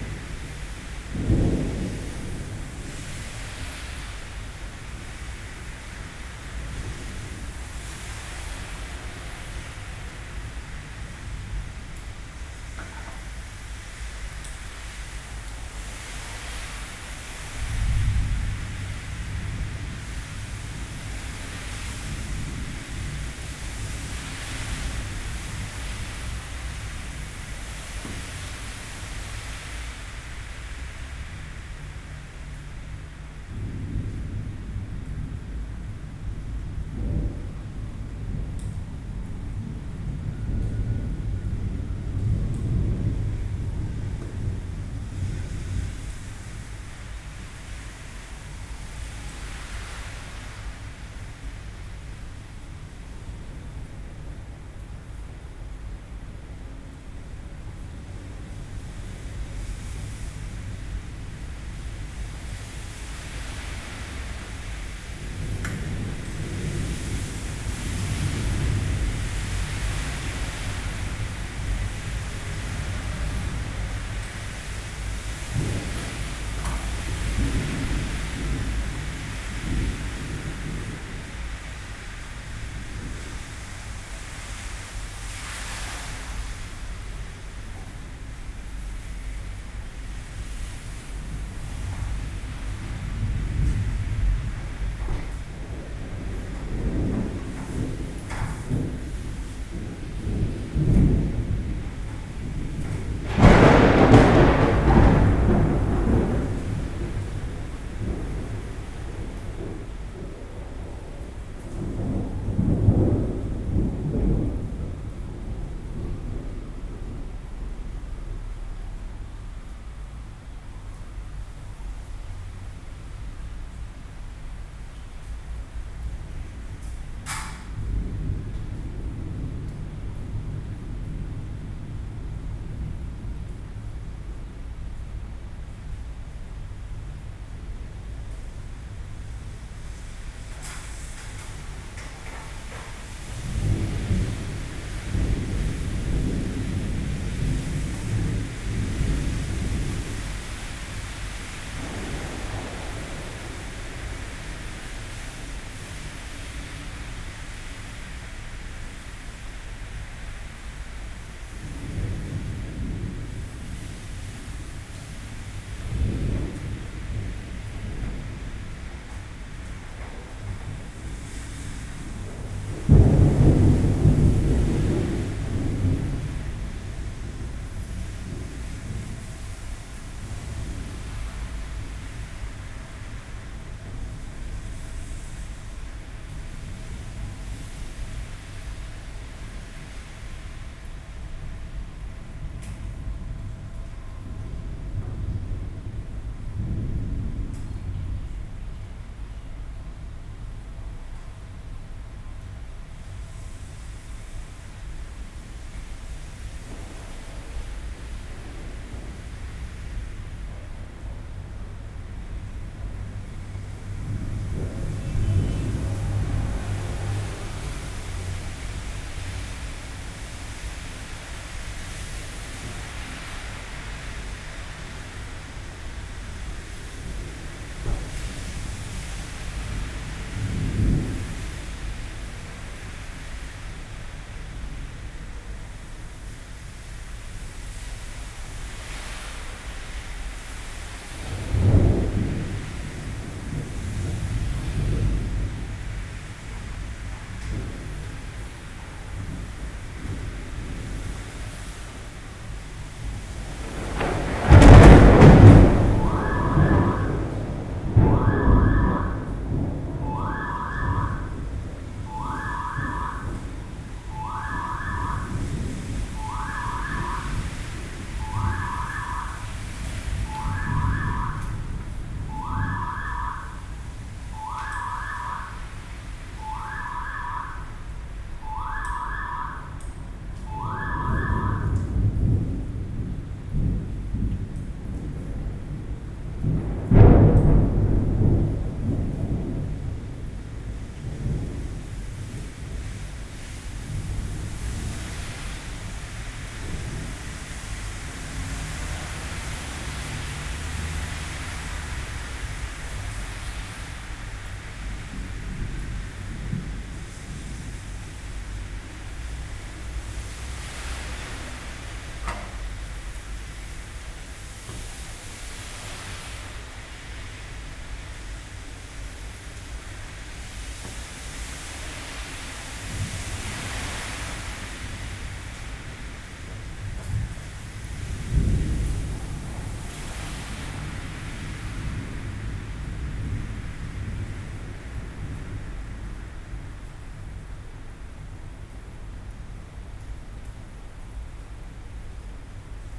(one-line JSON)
{"title": "tamtamART.Taipei, Taipei City - Thunderstorm", "date": "2013-06-23 16:37:00", "description": "Indoor, Thunderstorm, Sony PCM D50 + Soundman OKM II", "latitude": "25.05", "longitude": "121.52", "altitude": "24", "timezone": "Asia/Taipei"}